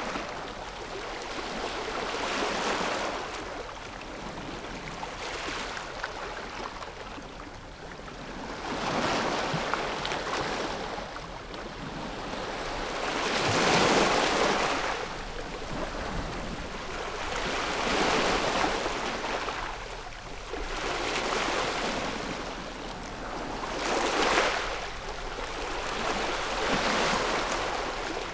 Ajia Rumeli, Crete, sea shore - waves splashing on a rocky sea shore of Libyan sea